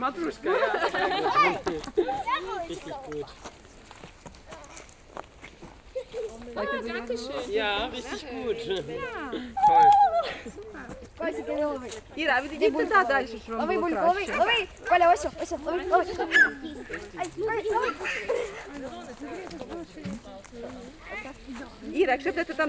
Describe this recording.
Audio documentation of an excursion to the forest with Ukrainian women and children